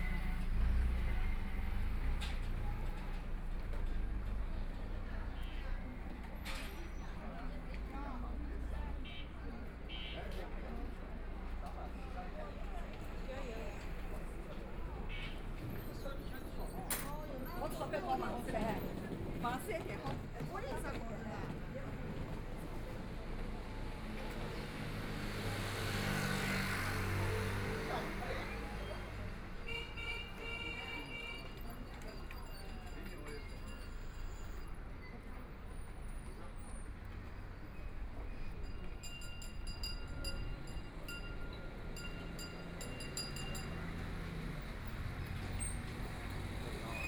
Walking through the old neighborhoods, Market, Fair, The crowd gathered on the street, Voice chat, Traffic Sound, Binaural recording, Zoom H6+ Soundman OKM II
Shanghai, China, 3 December 2013